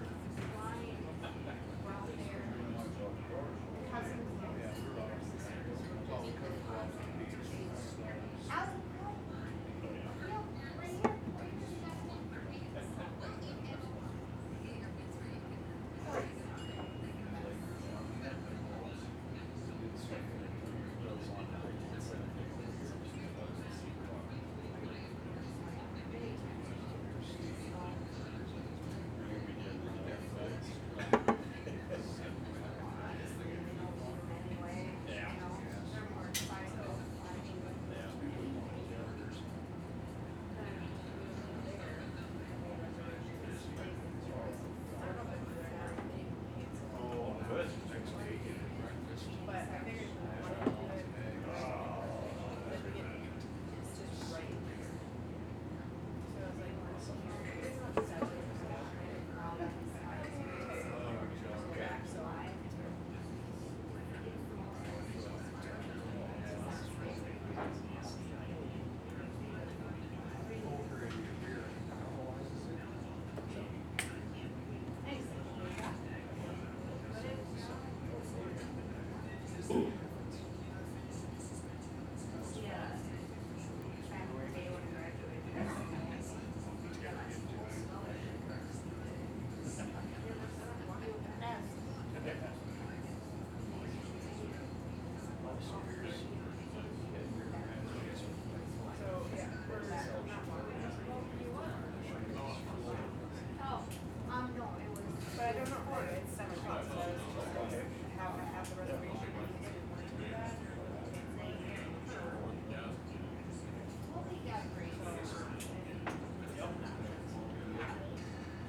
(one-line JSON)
{"title": "Bass Lake Cheese Factory - Lunch at the Bass Lake Cheese Factory", "date": "2022-03-23 15:45:00", "description": "The sounds of eating lunch inside the Bass Lake Cheese Factory", "latitude": "45.06", "longitude": "-92.68", "altitude": "285", "timezone": "America/Chicago"}